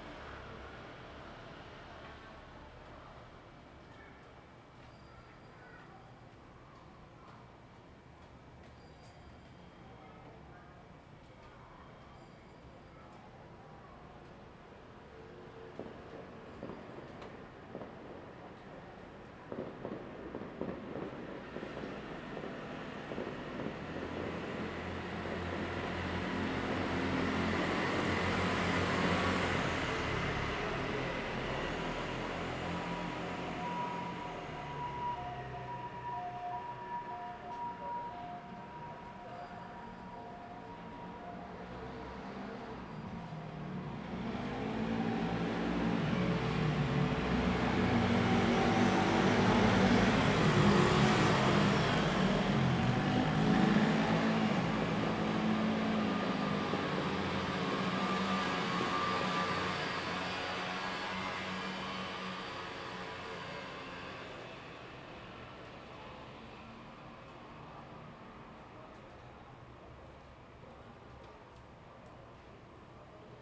old community Night, Traffic Sound, The distant sound of fireworks
Zoom H2n MS +XY